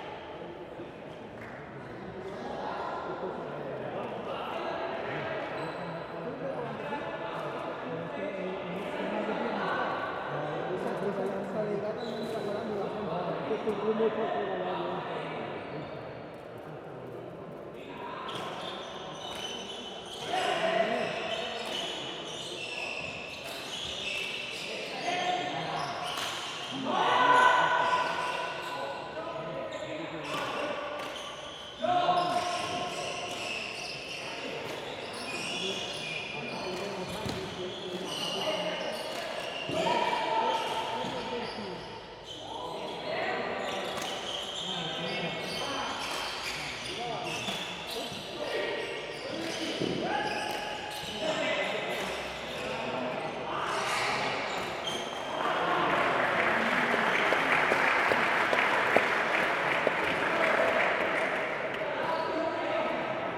Carrer dAlmoines, Bellreguard, Valencia, Spain - Partida de Pilota
Recorded on the internal mics of a Zoom H2n.
The last few minutes of a match of Pilota.